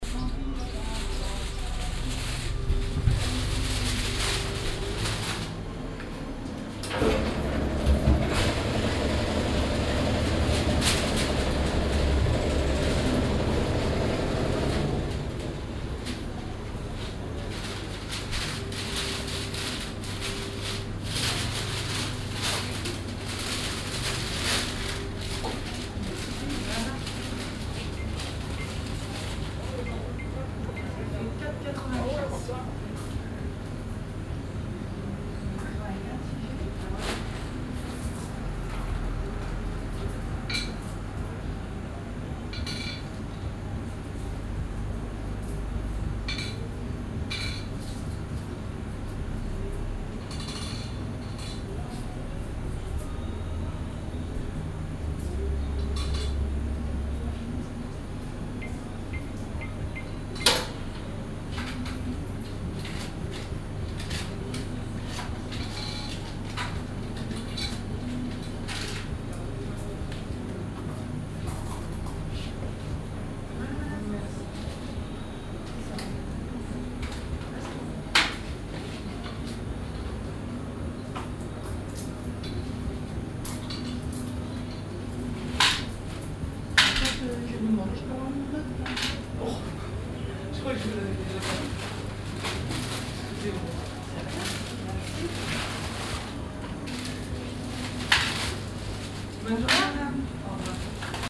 audresseles, boulangerie
morgens, in der örtlichen bäckerei, stimmen, hintergrundsmusik und die brotmaschine
fieldrecordings international:
social ambiences, topographic fieldrecordings
marktplatz, boulangerie